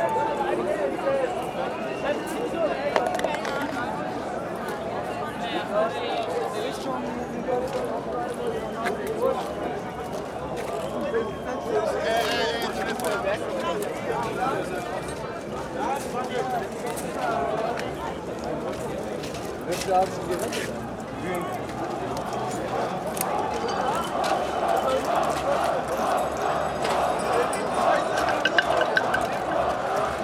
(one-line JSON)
{"title": "berlin, skalitzer straße: 1st may soundwalk (6) - the city, the country & me: 1st may soundwalk (6)", "date": "2011-05-01 23:49:00", "description": "1st may soundwalk with udo noll\nthe city, the country & me: may 1, 2011", "latitude": "52.50", "longitude": "13.42", "altitude": "38", "timezone": "Europe/Berlin"}